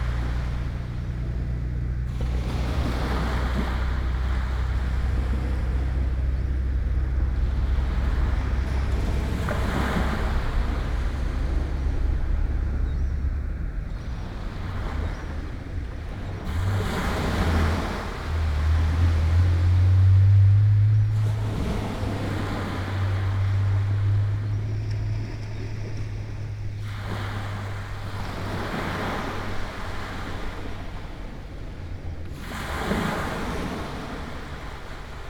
S W Coast Path, Swanage, UK - Swanage Beach
Morning meditation on Swanage beach. Recorded on a matched pair of Sennheiser 8020s, Jecklin Disk and SD788T.